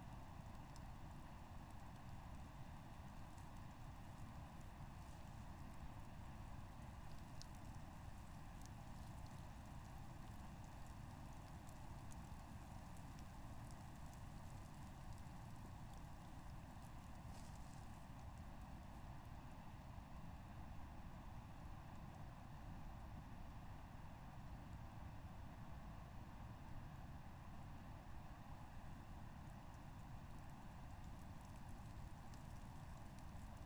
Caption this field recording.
places small mics on dried bushes. rain is starting and a dam not so far...